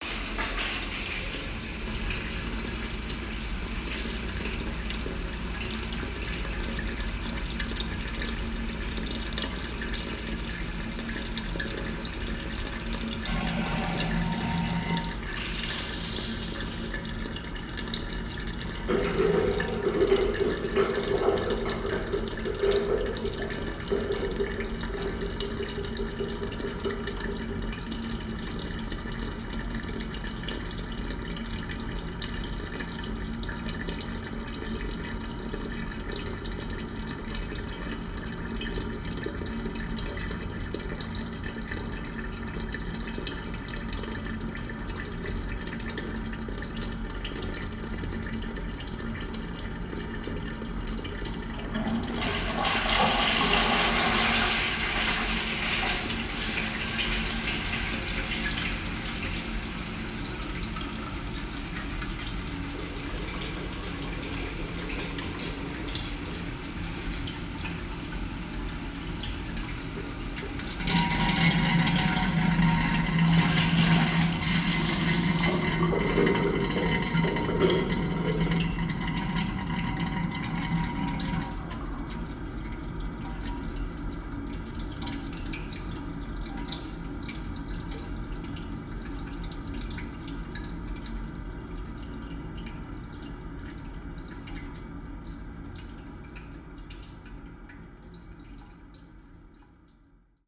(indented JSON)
{
  "title": "National Gallery, London, UK - Men's Toilets - National Gallery",
  "date": "2016-02-10 16:30:00",
  "description": "Recorded with a pair of DPA 4060s into a Marantz PMD661",
  "latitude": "51.51",
  "longitude": "-0.13",
  "altitude": "26",
  "timezone": "Europe/London"
}